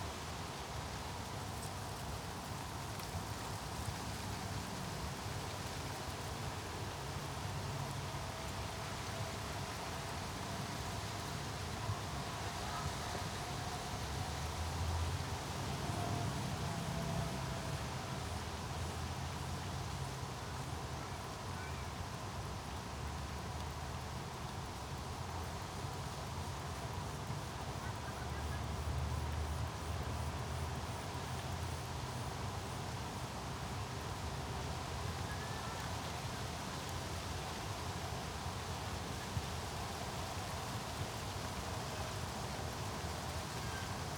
Tempelhofer Feld, Berlin, Deutschland - summer afternoon
place revisited. it sounds like autumn, also because nature suffers from the drought this summer
(Sony PCM D50, Primo EM172)